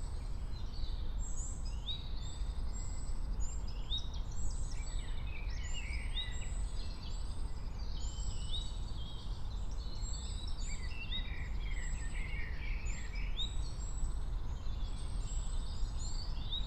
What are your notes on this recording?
08:30 Berlin, Königsheide, Teich, (remote microphone: AOM 5024HDR/ IQAudio/ RasPi Zero/ 4G modem)